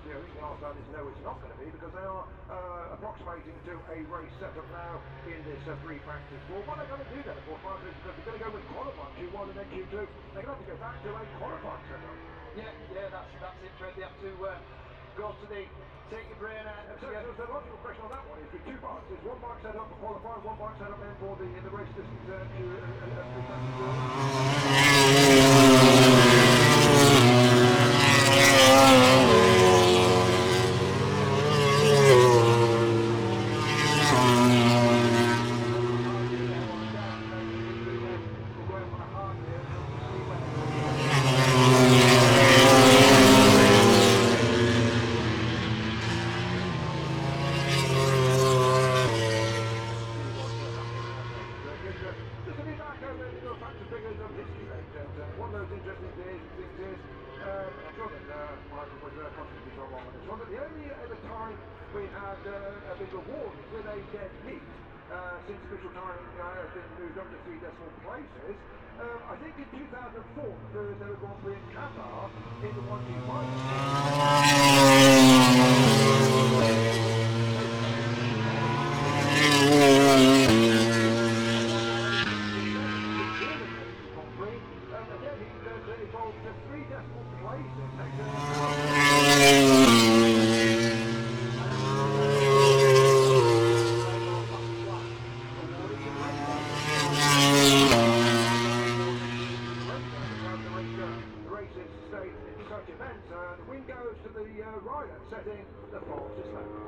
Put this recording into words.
british motorcycle grand prix 2019 ... moto grand prix free practice four ... and commentary ... copse corner ... lavalier mics clipped to sandwich box ...